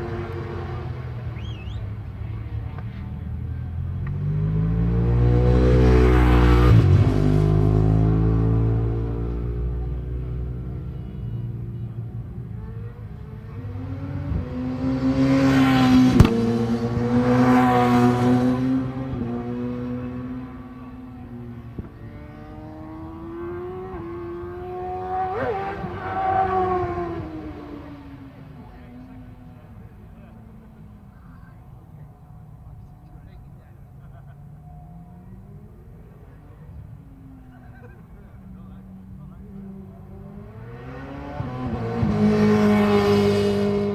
Leicester, UK - british superbikes 2002 ... superbikes ...
british superbikes 2002 ... superbike free practice ... mallory park ... one point stereo mic to minidisk ... date correct ... time not ...